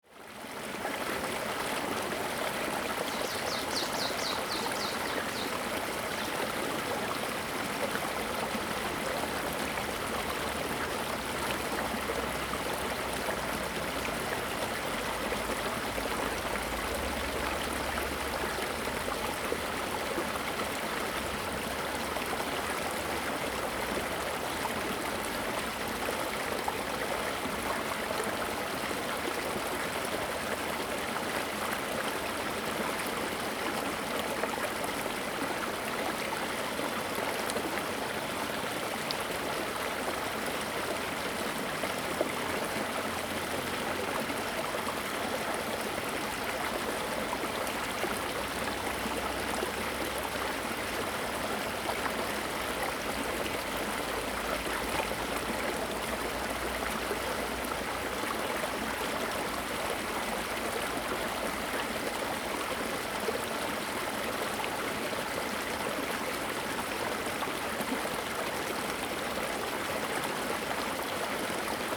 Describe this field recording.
Irrigation channels, Flow sound, birds sound, Zoom H2n MS+XY